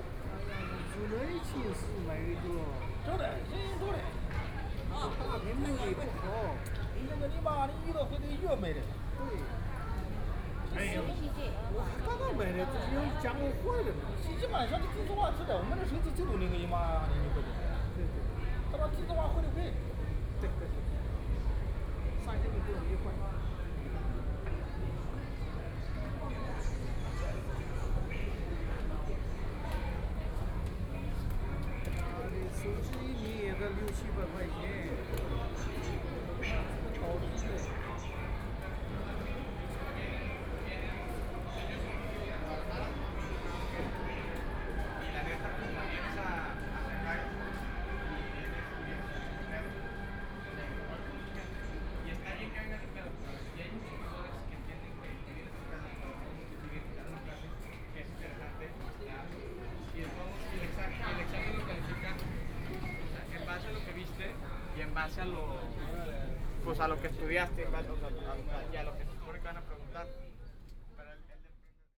Laoximen Station, Shanghai - in the station
Walking through the station, Conversion to another subway line, On the platform waiting for the train, Binaural recording, Zoom H6+ Soundman OKM II